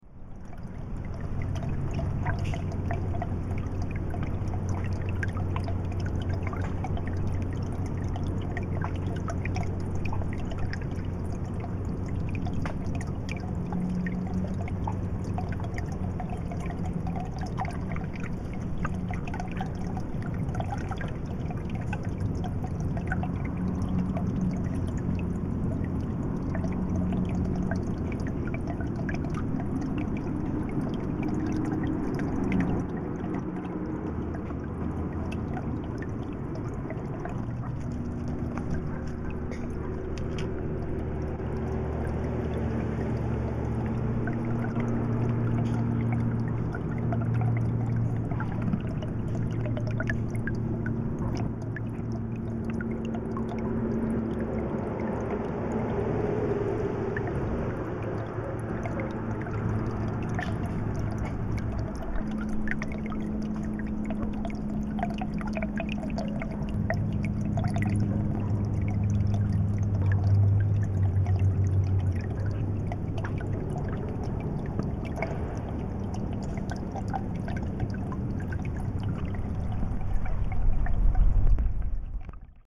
Matheson Hammock County Park, Old Cutler Road, Miami, FL, USA - Falling Tide
October Bayside. Water flowing from pipe during falling tide. Clicking sounds produced by Mangrove Crabs. Cars on nearby road, dog barking in distance.
23 October 2014, 14:52